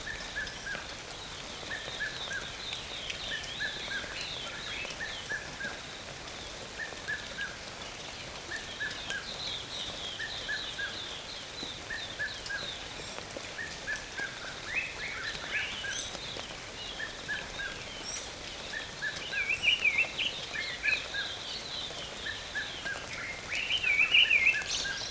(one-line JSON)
{"title": "Linden, Randburg, South Africa - Birds singing in the rain", "date": "2021-12-27 04:40:00", "description": "Early morning. Light rain. various birds. EM172's on a Jecklin Disc to H2n.", "latitude": "-26.14", "longitude": "28.00", "altitude": "1624", "timezone": "Africa/Johannesburg"}